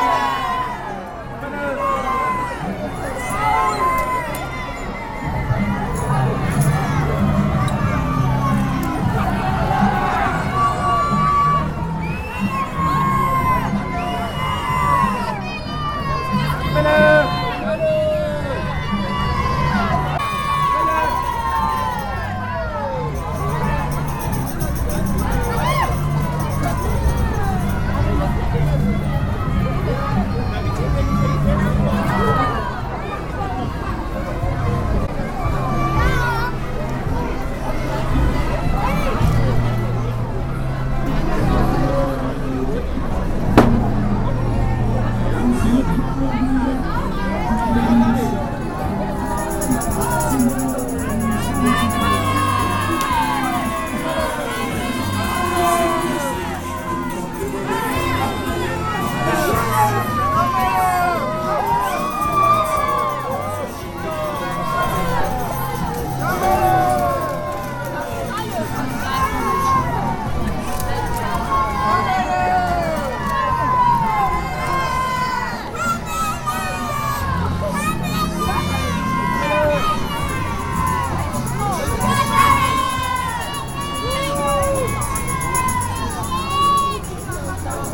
Cologne, Siebachstr., Deutschland - Veedels-Zoch Nippes / carnival parade
Carnivals parade in Cologne-Nippes with the carnival associations and the schools of the quarter: a parade of floats and marching bands, people lining the streets shouting for "kammelle" (sweets) and "strüssjer" (flowers), that are thrown from the floats.
Cologne, Germany, 4 March 2014, 15:20